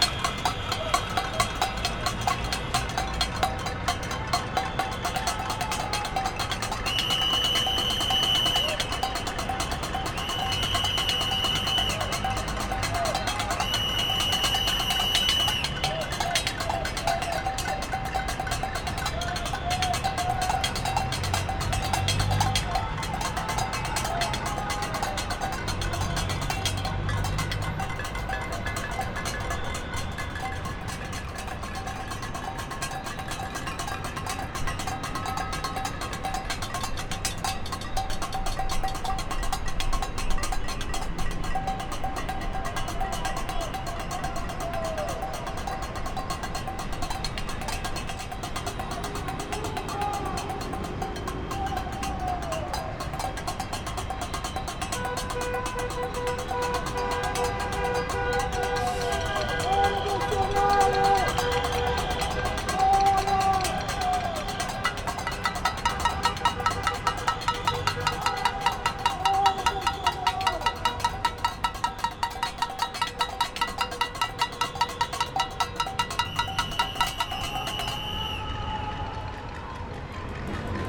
Edifício JK Bloco B - Panelaço | Banging pans protest against Bolsonaro during COVID-19 crisis
Against Bolsonaro, people bang pans and scream at the windows of their apartments at night in downtown Belo Horizonte, in JK Building.
2nd Day of protests in face of the crisis triggered by the Brazilian president after his actions when COVID-19 started to spread throughout the country.
Recorded on a Zoom H5 Recorder